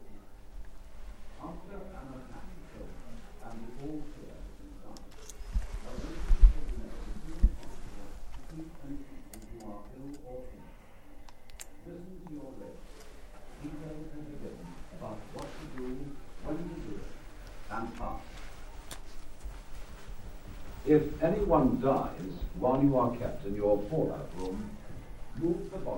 Kelvedon Hatch Secret Nuclear Bunker
Sounds of the museum inside of the former cold war bunker. Recorded June 3, 2008 while touring the bunker with Bernd Behr.
Brentwood, Essex, UK, 3 June